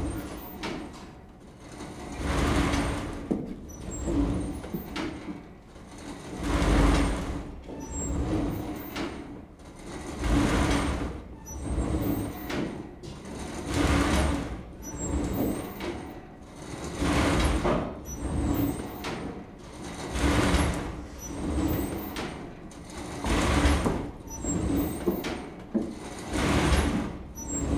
Kraaienest, Zaandam, Netherlands - Inside the Jonge Schaap sawmill.
Het Jonge Schaap is the wooden wind powered sawmill, located in the Zaanse Schans, in the municipality of Zaanstad. The original mill was built in 1680 and demolished in 1942. In 2007, a replica of the mill at between the mills "De Zoeker" and "De Bonte Hen" was built. The velocity of the saw depends on the wind. It was rather mild weather.